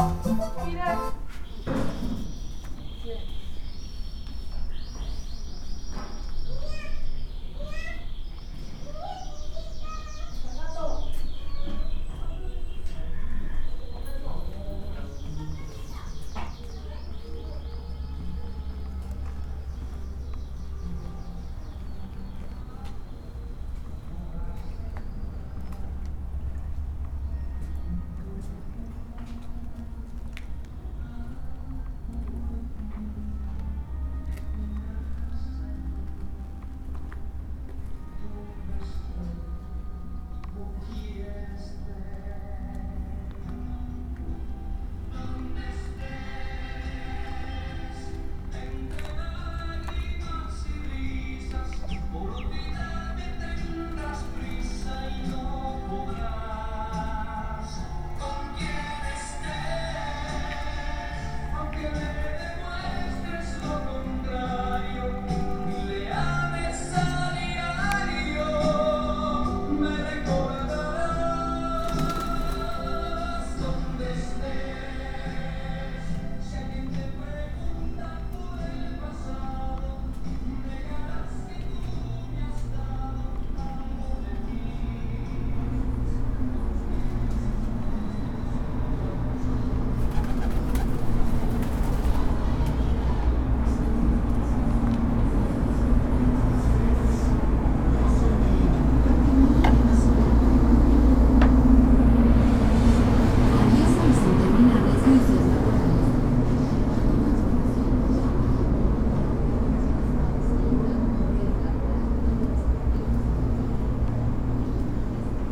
Julián de Obregón, Obregon, León, Gto. - Entrando a una vecindad y caminando por sus pasillos.
Entering a neighborhood (called "vecindad" in Mexico) and walking through its corridors.
I made this recording on November 29, 2021, at 1:38 p.m.
I used a Tascam DR-05X with its built-in microphones and a Tascam WS-11 windshield.
Original Recording:
Type: Stereo
Esta grabación la hice el 29 de noviembre de 2021 a las 13:38 horas.
Guanajuato, México